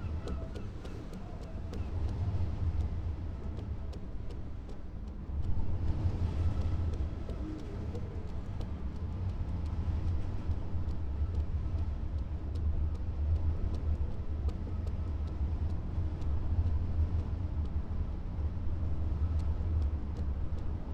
Crewe St, Seahouses, UK - flagpole and iron work in wind ...
flagpole lanyard and iron work in wind ... xlr sass to zoom h5 ... bird calls from ... starling ... jackdaw ... herring gull ... oystercatcher ... lesser black-backed gull ... unedited extended recording ...